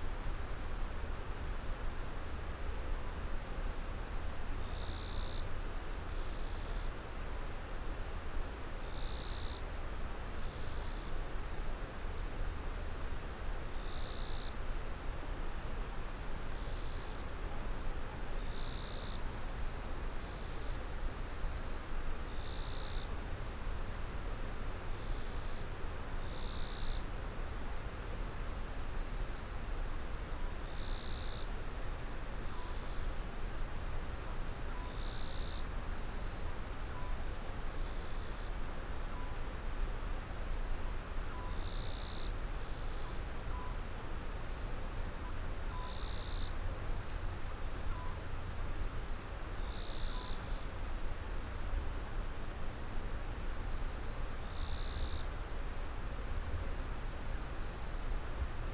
느랏재 계곡 5월 Neuratjae Valley midnight (late May)
강원도, 대한민국, 24 May, 23:30